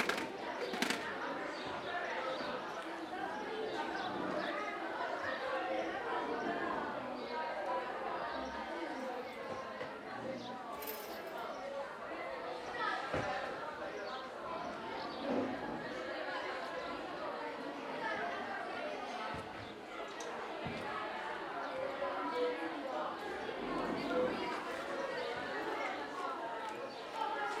L'Aquila, Scuola media Mazzini - 2017-05-22 04-Scuola Mazzini
ripresa a una distanza di 5/10 metri dalle finestre dalle aule della Scuola Mazzini, L'Aquila
L'Aquila AQ, Italy, 22 May 2017